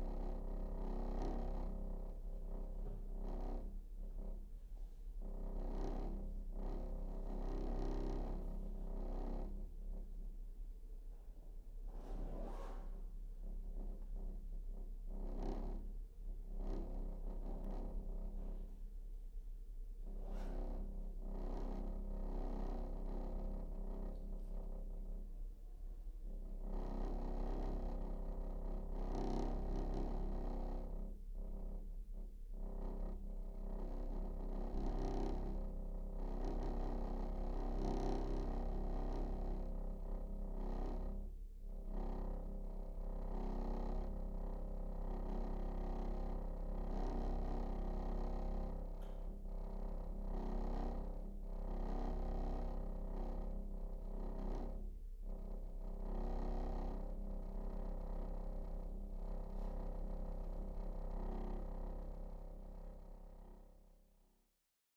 The Town Hall, Oxford, Oxford, UK - New building works rattling old windows
This is the sound of the thin glass windows in the old town hall being rattled by some building works or traffic outside. It interrupted all the way through an interview I was conducting in the space so I decided to give this sound a recording all of its own.